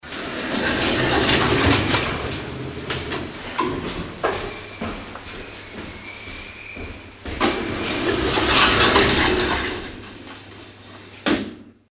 monheim, sankt josef krankenhaus, aufzug
aufzugfahrt morgens
soundmap nrw: social ambiences/ listen to the people - in & outdoor nearfield recordings